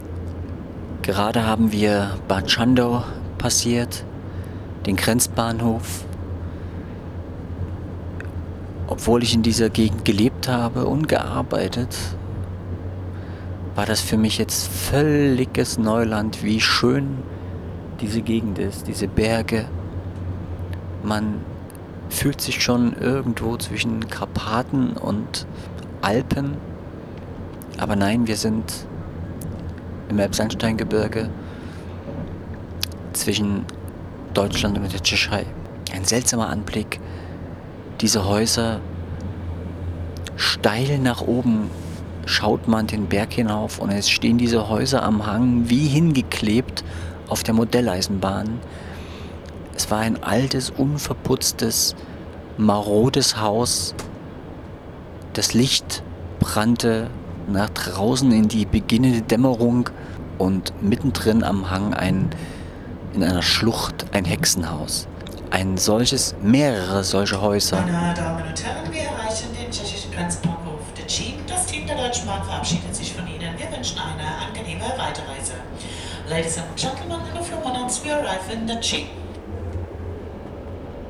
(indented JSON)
{
  "title": "on the train 01814 Bad Schandau, Deutschland - Bahnimpressionen",
  "date": "2012-11-17 20:08:00",
  "description": "i started my own little 2nd Law World Tour from MUSE. chapter one VIENNA 19NOV 2012: On the train from berlin to vienna. at the end of germany...(pcm recorder olympus ls5)",
  "latitude": "50.91",
  "longitude": "14.17",
  "altitude": "132",
  "timezone": "Europe/Berlin"
}